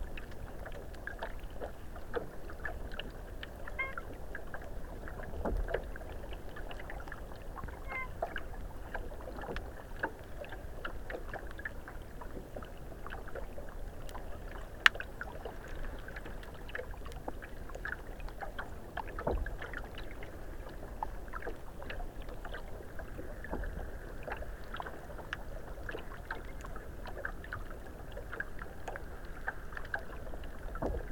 Galatas, Crete, underwater
hydrophone in the bay
Galatas, Greece, 2 May 2019